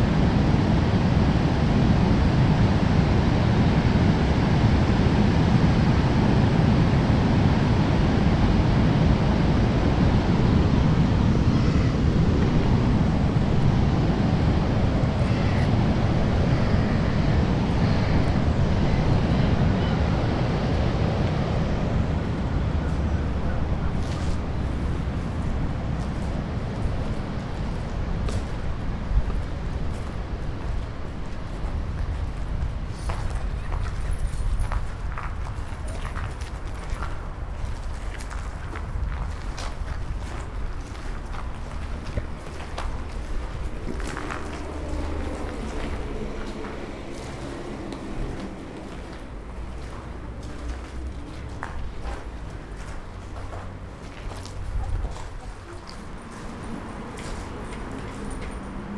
River side Pavel Wonka - Labe
inline, river, water
Severovýchod, Česko, European Union